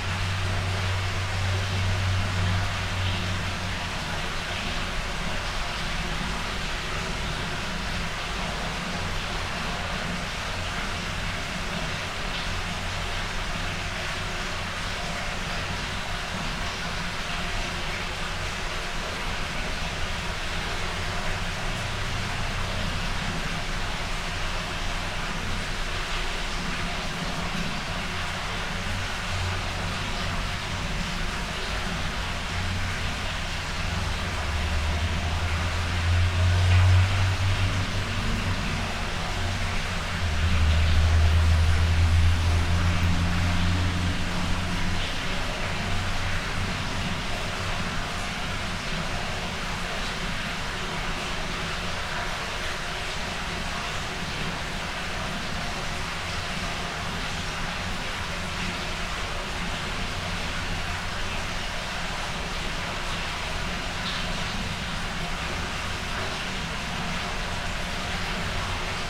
Utena, Lithuania, in the well
some partly open rainwater drainage well. small omni micropphones.
Utenos apskritis, Lietuva